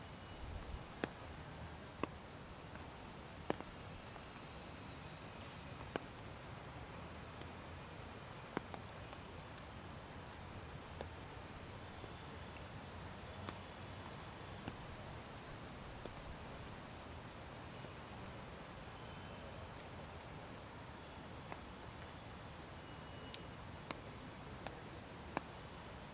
Parque - Tenente Siqueira Campos - Trianon - Rua Peixoto Gomide, 949 - Cerqueira César, São Paulo - SP, 01409-001, Brasil - Dia de chuva na ponte do Parque Trianon - SP
O áudio da paisagem sonora foi gravado no cruzamento onde se encontra a ponte dentro do Parque Trianon, em São Paulo - SP, Brasil, no dia 17 de setembro de 2018, às 12:46pm, o clima estava chuvoso e com ventos leves. Foram usados apenas o gravador Tascam DR-40 com seus microfones condensadores cardióides, direcionados para fora, acoplado em um Tripé Benro.